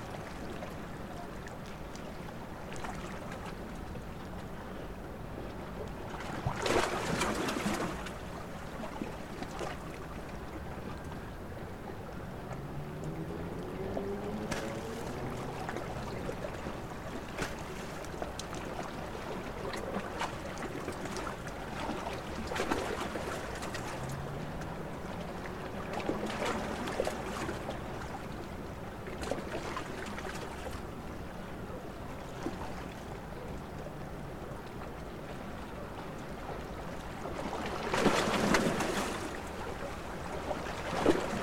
Sc Puerto Gandia Autoriza, Valencia, España - Noche junto al puerto de Gandía zona de Pescadores
Noche en el puerto de Gandía, en una zona donde se suelen poner pescadores, esta noche había unos señores pescando. Donde se ponen los pescadores es una zona rocosa y aunque al ser puerto el movimiento del agua es tranquilo, los pequeños movimientos de agua que chocan en la zona rocosa hace sonar esos gorgoritos de agua y los pequeños choques de olas. Está cerca del paseo marítimo y al ser una zona turística, se puede escuchar un poco de fondo el sonido de la vida del paseo.